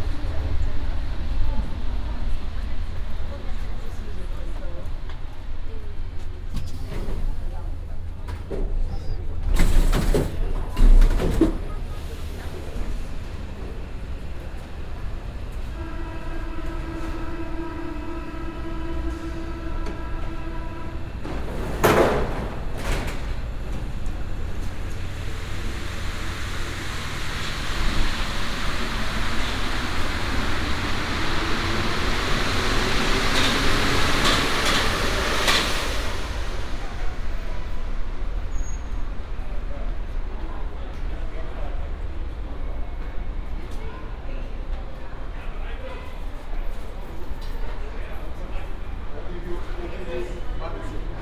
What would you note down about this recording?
Binaural recording of a metro ride trip above ground with line 6 from Cambronne to Bir Hakeim. Recorded with Soundman OKM on Sony PCM D100